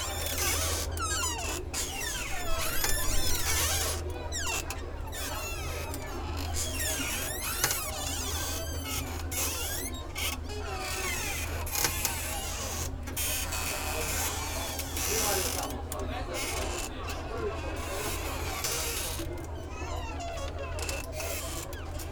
sea room, Novigrad, Croatia - moody tales
built in closet, open windows